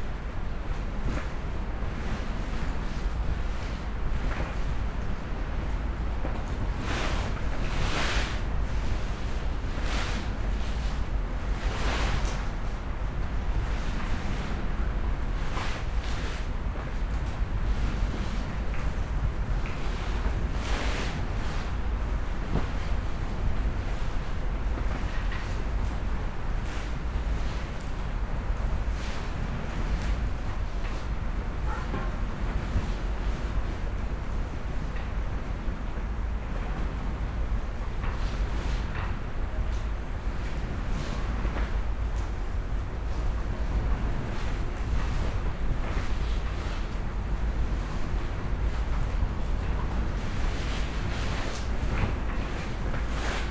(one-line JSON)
{"title": "Schillig, Wangerland - wind and sea in a tent", "date": "2014-09-13 16:50:00", "description": "empty tent at the beach, wind and sea sounds heard within\n(Sony PCM D50, DPA4060)", "latitude": "53.71", "longitude": "8.03", "altitude": "1", "timezone": "Europe/Berlin"}